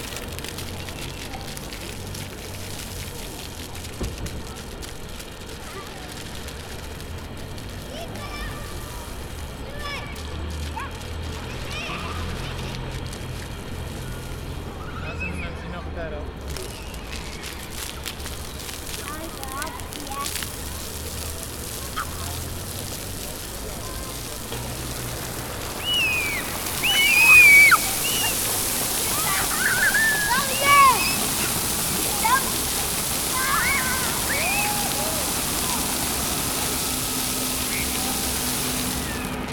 Springbrunnen vor dem Bundeshaus
Springbrunnen, Bundeshaus, Bundesplatz, Juchzger durch die Wasserfontänen, urbane Geräusche, verspielte Atmosphäre bei warmem Wetter
10 June 2011, ~17:00